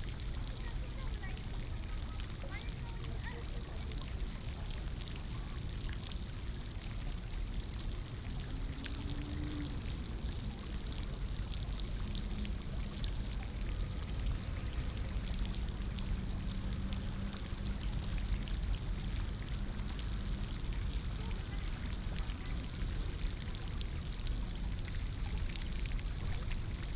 Fu Bell, National Taiwan University - fountain
At the university, fountain
4 March 2016, 15:11